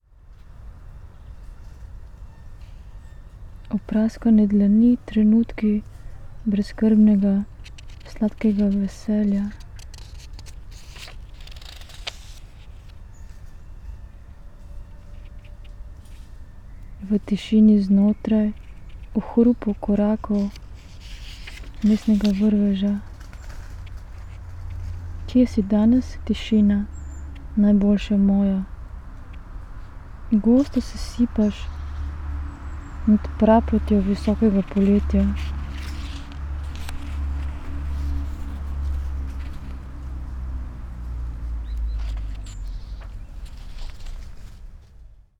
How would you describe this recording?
opraskane dlani, trenutki brezskrbnega, sladkega veselja, v tišini znotraj, v hrupu korakov mestnega vrveža, kje si danes, tišina? najboljša moja, gosto se sipaš nad praprotjo visokega poletja